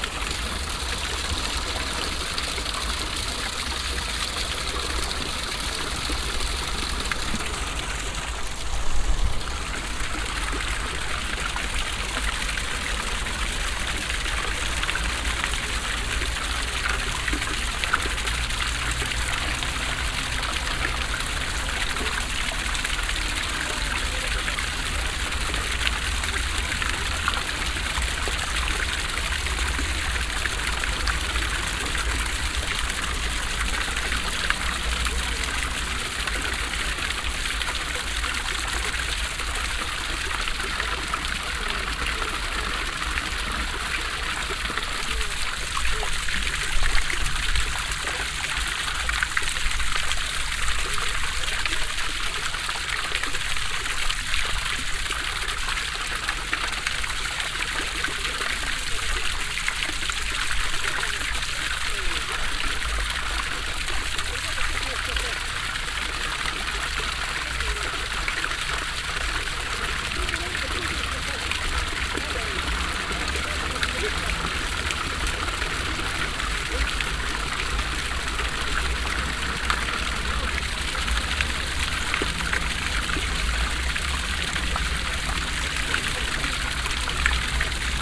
dresden, hauptstraße, small classic fountain
soundmap d: social ambiences/ in & outdoor topographic field recordings